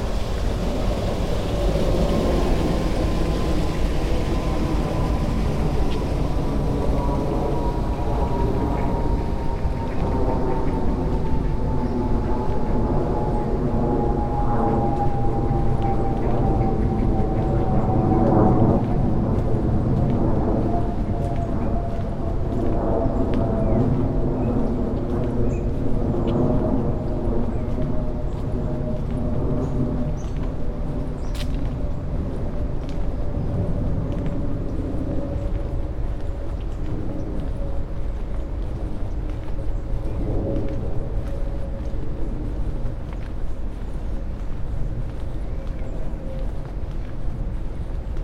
Heron Island, Reading, UK - Walking to Caversham Weir
A short walk from the bridge over the brook at Heron Island (location marked on the map) to Caversham Weir (spaced pair of Sennheiser 8020s with SD MixPre6).